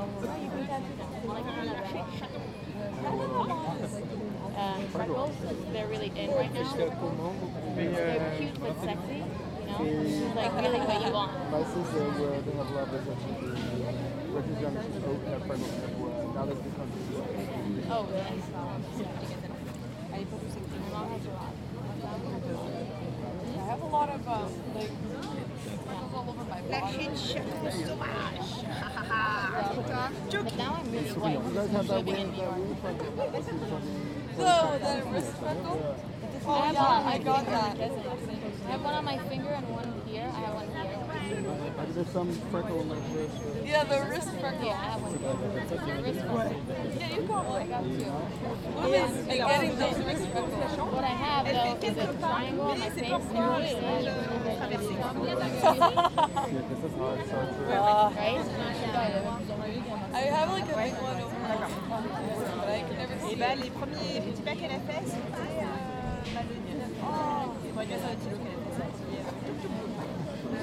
Square du Vert-Galant, Place du Pont Neuf, Paris, Frankrijk - Conversations in the park
General atmosphere and conversations in a very crowded Square Du Vert - Galant, a tiny park on the very edge of Île de la Cité in Parijs.
Place du Pont Neuf, Paris, France, 1 June 2019, 17:30